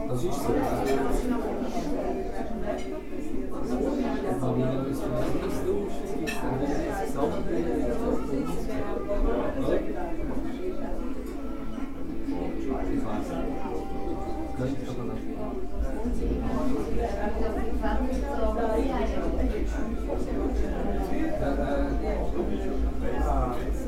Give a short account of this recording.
restaurant frohsinn, stadlerstr. 2, 8182 hochfelden